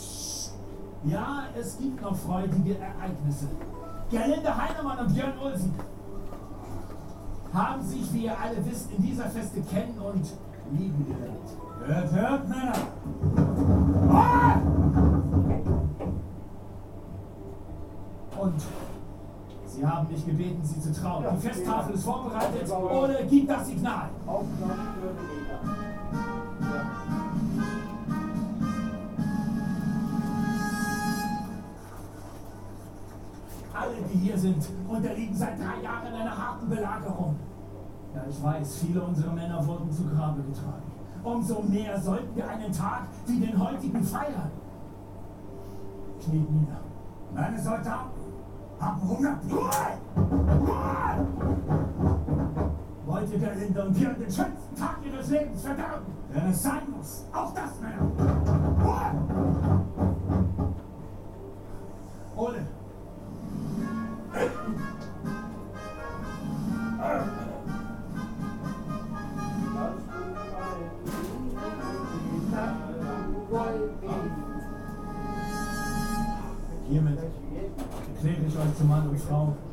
Moabit, Berlin, Deutschland - klaus störtebeker in den spenerstuben
klaus störtebeker in den spenerstuben; spenerstuben, spenerstr. 29, 10557 berlin